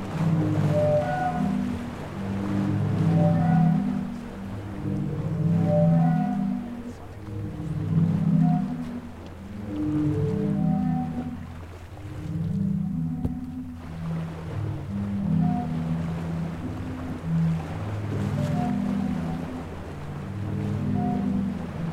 Istarska obala, Zadar, Croatia - sea organ